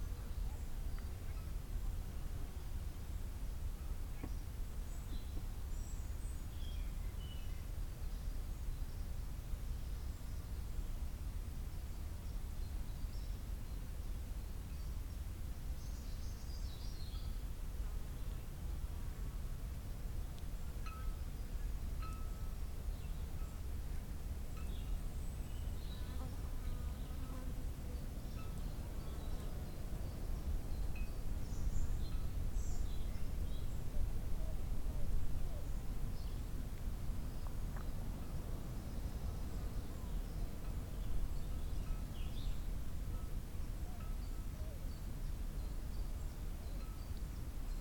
Le vieux fort de lîle Chausey face à la mer, Granville, France - 010 AMB ILE CHAUSEY MER CALME MAT DE BATEAU EN METAL OISEAUX GOELANDS INSECTES AVION MIX PRE 6 HAUN MBP 603 CARDIO ORTF -42LUFS